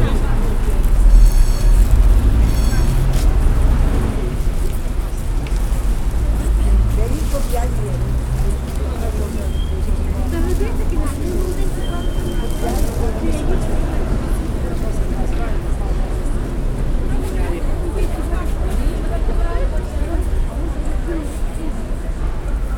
Lychakivs'kyi district, Lviv, Lviv Oblast, Ukraine - Vinnikivskiy Market
Among vendors at the sidewalk in front of the market, selling home-grown and -made produce. Binaural recording.
L'viv, Lviv Oblast, Ukraine, 4 April 2015